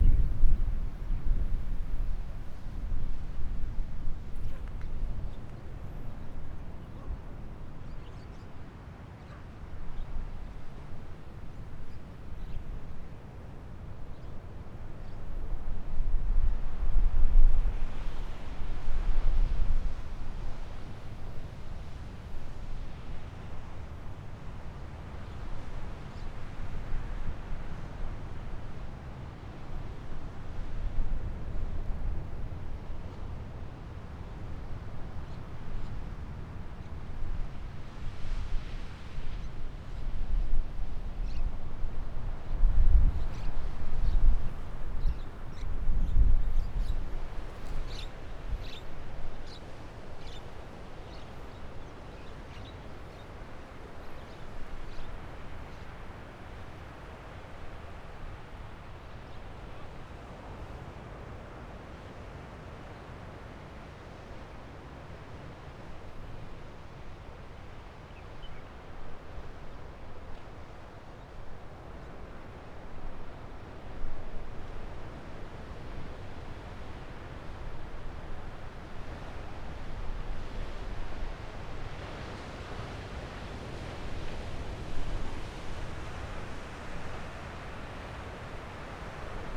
{
  "title": "Taitung City - At the beach",
  "date": "2014-01-15 16:31:00",
  "description": "At the beach, Sound of the waves, Birds singing, Dogs barking, The distant sound of an ambulance, Aircraft flying through, Zoom H6 M/S, +Rode Nt4",
  "latitude": "22.74",
  "longitude": "121.15",
  "timezone": "Asia/Taipei"
}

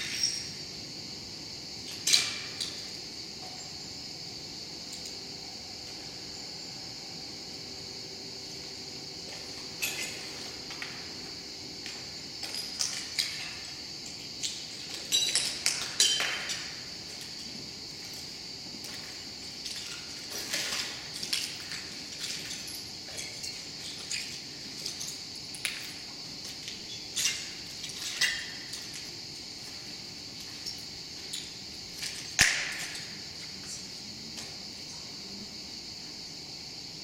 {
  "title": "Panorâmico de Monsanto, Lisboa, Portugal - Panorâmico de Monsanto ruin #WLD2016 Monsanto Soundwalk listening posts 3to5 pt1",
  "date": "2016-07-16 12:00:00",
  "description": "#WLD2016\nPart 1",
  "latitude": "38.73",
  "longitude": "-9.18",
  "altitude": "201",
  "timezone": "Europe/Lisbon"
}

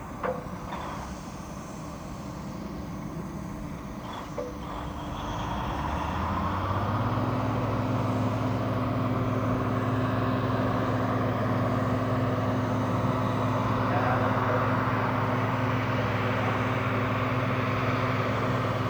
{
  "title": "Spremberg, Germany - Welcow Süd mine - distant work and atmosphere",
  "date": "2012-08-24 15:56:00",
  "description": "Watching work on a giant excavating machine. It is a mystery what they are doing.",
  "latitude": "51.58",
  "longitude": "14.28",
  "altitude": "105",
  "timezone": "Europe/Berlin"
}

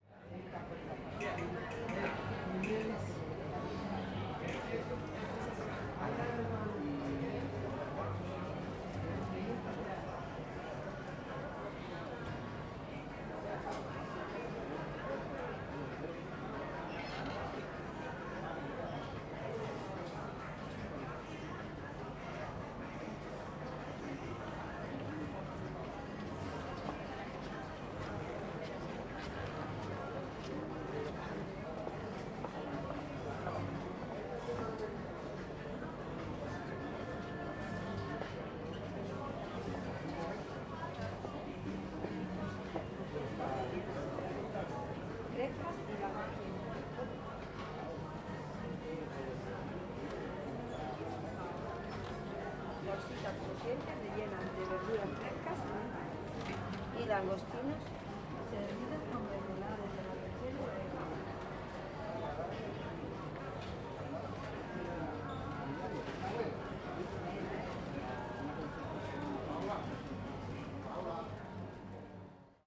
{
  "title": "Centro Histórico, Málaga, Prowincja Malaga, Hiszpania - Malaga Sub",
  "date": "2014-10-19 16:29:00",
  "description": "Recorded while standing in front of a Subway bar. Recorded with Zoom H2n.",
  "latitude": "36.72",
  "longitude": "-4.42",
  "altitude": "18",
  "timezone": "Europe/Madrid"
}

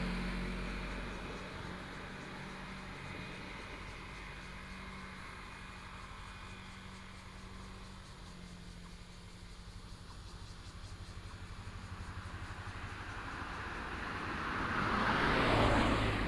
{
  "title": "內山公路, Sanxia Dist., New Taipei City - Bird and traffic sound",
  "date": "2017-08-14 10:37:00",
  "description": "highway, Bird and traffic sound",
  "latitude": "24.89",
  "longitude": "121.34",
  "altitude": "118",
  "timezone": "Asia/Taipei"
}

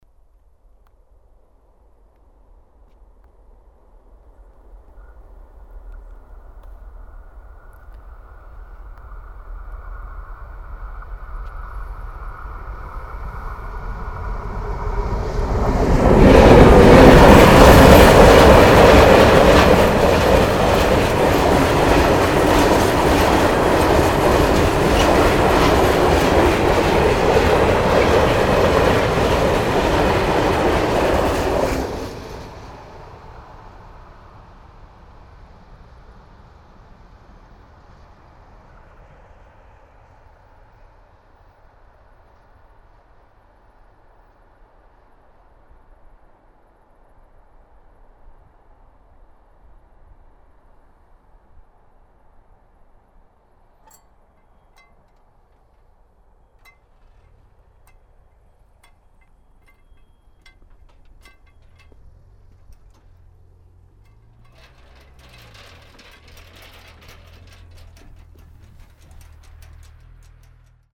{
  "title": "uhyst, bautzener str, railway crossing",
  "date": "2009-09-15 09:14:00",
  "description": "a railway crossing a small street. a train passing by in fst speed. the opening of the gates.\nsoundmap d - social ambiences and topographic fiedl recordings",
  "latitude": "51.36",
  "longitude": "14.51",
  "altitude": "131",
  "timezone": "Europe/Berlin"
}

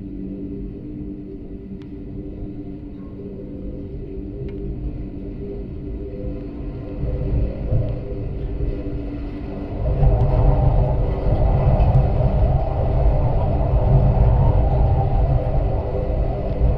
{
  "title": "Spittal of Glenshee, Blairgowrie, UK - the wind and the rain",
  "date": "2022-06-11 13:12:00",
  "latitude": "56.81",
  "longitude": "-3.46",
  "altitude": "338",
  "timezone": "Europe/London"
}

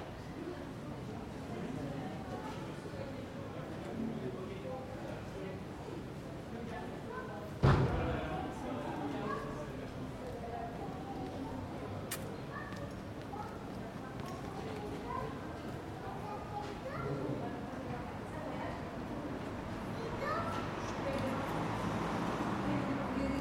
{
  "title": "Rue de Béarn, Paris, France - AMB PARIS EVENING RUE DE BÉARN MS SCHOEPS MATRICED",
  "date": "2022-02-22 19:12:00",
  "description": "This is a recording of the Rue de Béarn during evening near the famous 'Place des Vosges' located in the 3th district in Paris. I used Schoeps MS microphones (CMC5 - MK4 - MK8) and a Sound Devices Mixpre6.",
  "latitude": "48.86",
  "longitude": "2.37",
  "altitude": "45",
  "timezone": "Europe/Paris"
}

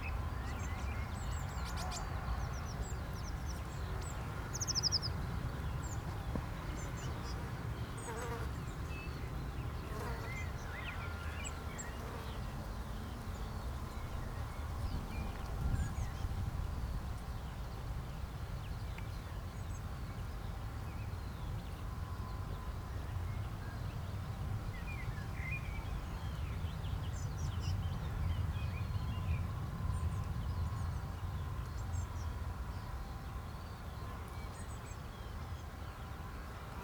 {
  "title": "Strzeszyn district, Poznan outskirts - field ambience",
  "date": "2018-05-30 10:01:00",
  "description": "recorded on a dirt road around crop fields in the outskirts of Poznan. Mellow morning summer ambience. Some distant reflections of construction works. Flies buzzing by. (sony d50)",
  "latitude": "52.46",
  "longitude": "16.85",
  "altitude": "96",
  "timezone": "Europe/Warsaw"
}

